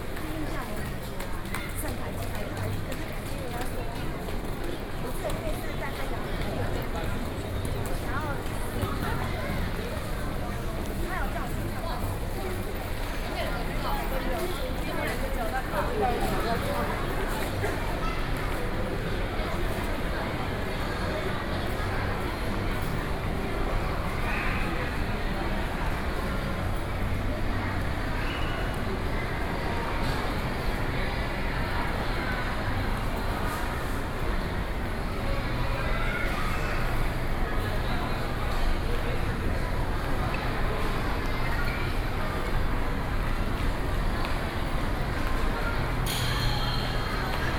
Banqiao District, New Taipei City - At the station mall
10 November 2012, 14:58